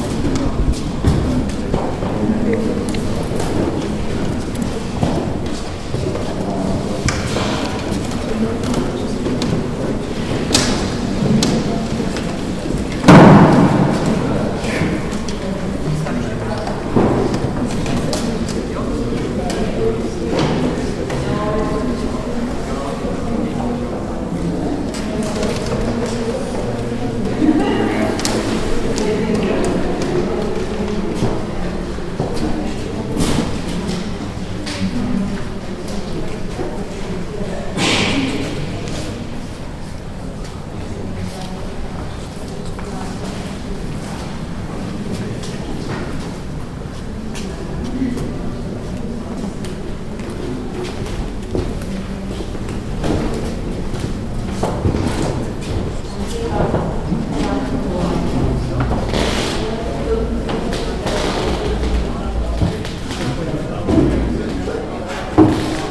quite interieur of the synagoge and transfer to the nearby pub
Favourite sounds of Prague project
11 May 2011, 22:02